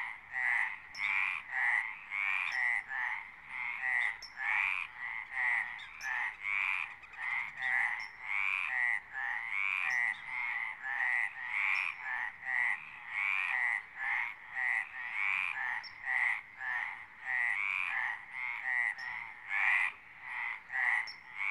Saint-Clément-des-Baleines, France - Midnight in St Clément